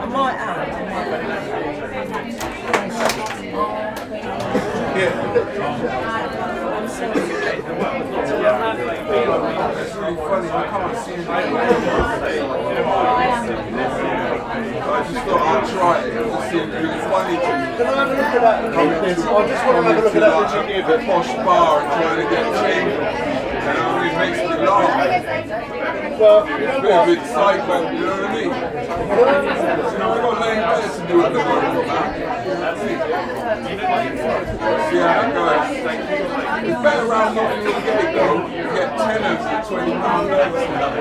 Dean Street, Soho, London, Royaume-Uni - French House
Inside a pub (French House), a guy is asking me some change to eat, Zoom H6